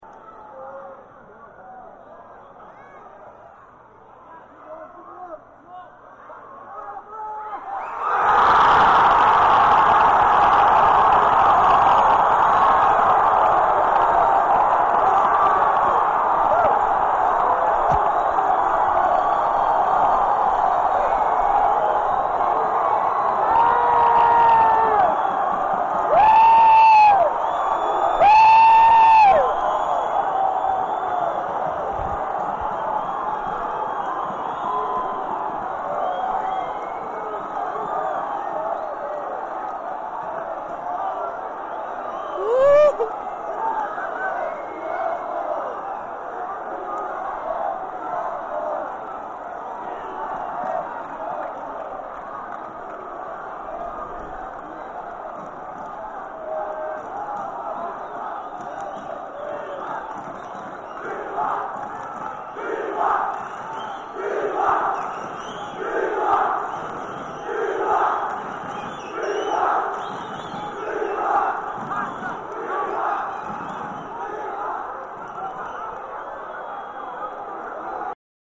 Serra Dourada, Jogo do Vila Nova F.C.
Jogo do Vila Nova pela Serie B de 2009